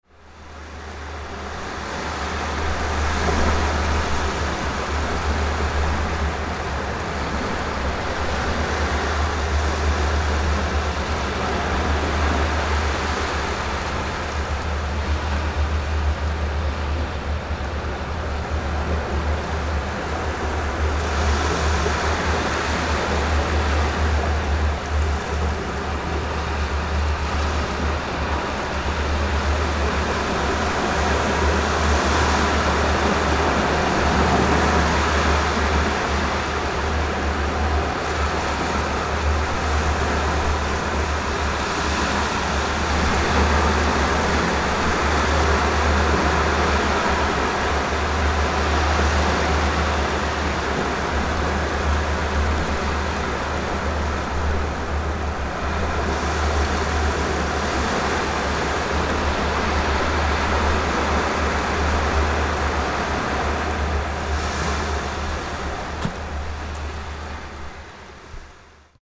France - Asnelles blockhouse
Sound of waves from a blockhaus at ebb tide, recorded with Zoom H6
21 April 2014